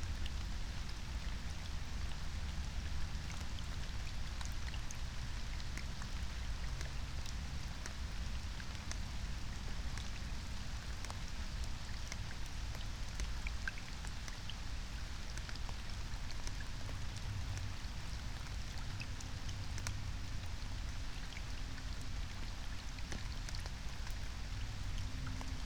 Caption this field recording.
22:33 Berlin, ALt-Friedrichsfelde, Dreiecksee - train triangle, pond ambience